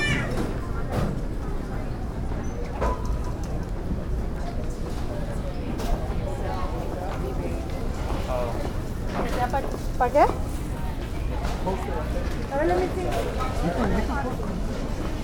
Target, Chicago, World Listening Day - Target, World Listening Day
walking past cashiers in to the store on a busy Sunday afternoon, World Listening Day, WLD, Target store
IL, USA, 18 July 2010, 14:46